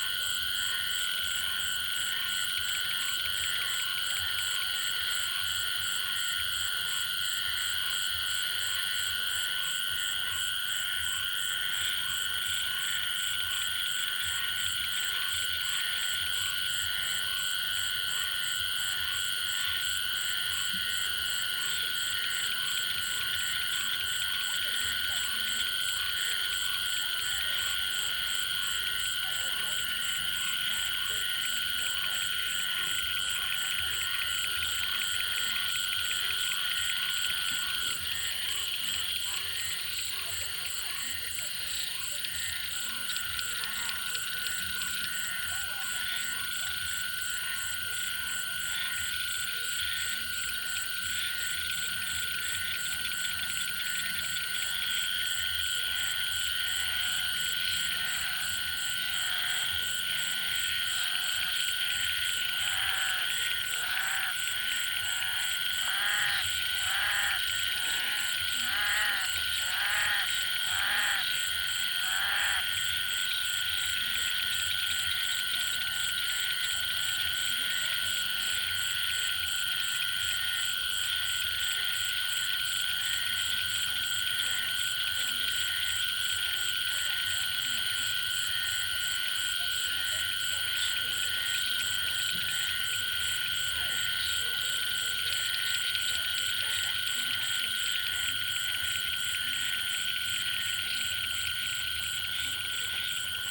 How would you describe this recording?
Variations of amphibian acoustic phenomena documented in Ghana. Specific species will be identified and documented off and onsite. Acoustic Ecologists are invited to join in this research. *This soundscape will keep memory of the place as biodiversity is rapidly diminishing due to human settlements. Recording format: Binaural. Date: 22.08.2021. Time: Between 8 and 9pm. Recording gear: Soundman OKM II with XLR Adapter into ZOOM F4.